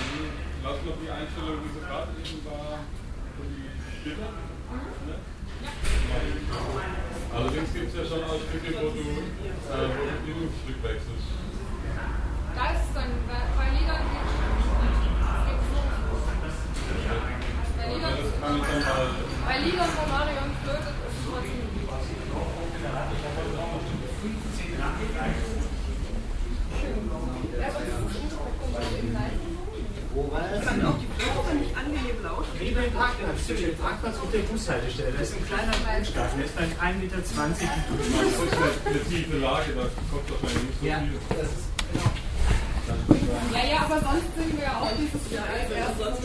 soundcheck und fachgespräche vor dem gig
project: :resonanzen - neanderland soundmap nrw: social ambiences/ listen to the people - in & outdoor nearfield recordings
der club, 19 April 2008, 10:45